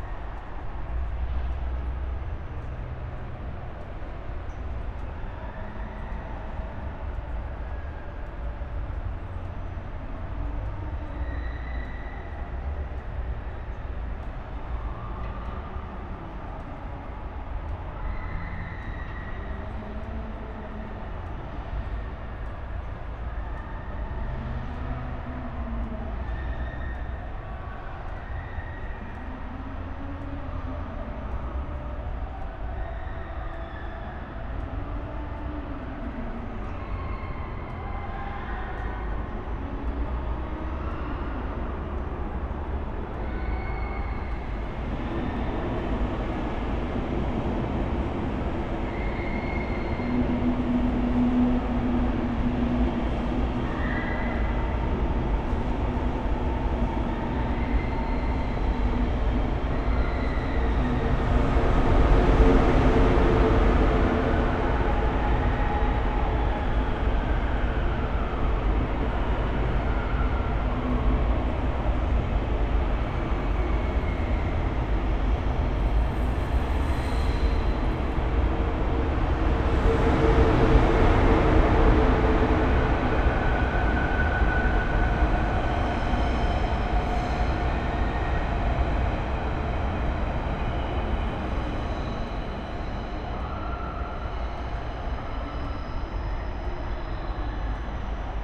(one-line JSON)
{"title": "berlin, littenstr. - diffuse sound field in courtyard 2011", "date": "2011-12-22 21:15:00", "description": "backyard revisited together with Peter Cusack. this is one of my seasonal favourite places. have to go there in spring too.\n(tech note: SD702, rode nt1a AB 60cm, mics pointing to the buildings)", "latitude": "52.52", "longitude": "13.41", "altitude": "41", "timezone": "Europe/Berlin"}